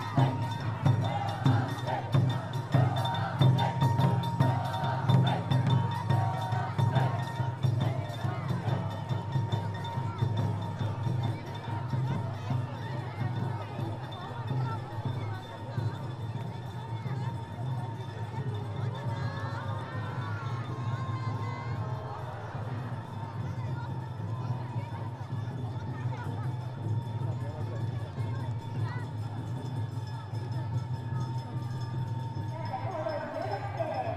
Japan, Fukuoka, Kitakyushu, Tobata Ward, Shinike, ヨイトサ広場 - Tobata Gion Oyamagasa Lantern Festival Opening
The start of the lantern festival is marked.
福岡県, 日本